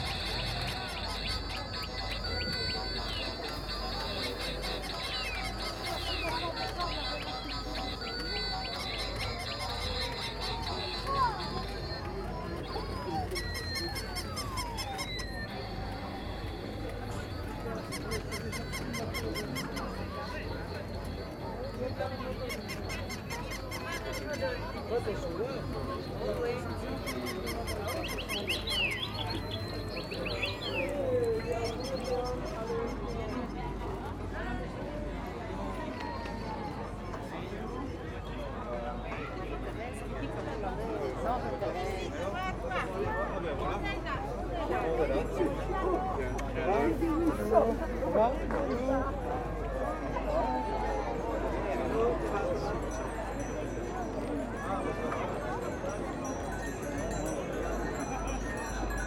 Court-St.-Étienne, Belgique - Fun fair
During the annual feast of Court-St-Etienne, there's a huge fun fair installed of the heart of the city. All is very hard to bear !!! There's so much noise of horrible conterfeit things... Recording begins with the all peruvian people selling fake commodities from China (here a small dog, a bird, and a slide with penguins). After, you dive in the horror film : carousel with horrible plastic music. The end is a merry go round for small children. All these sounds take part of a subculture, the fair ground ambience.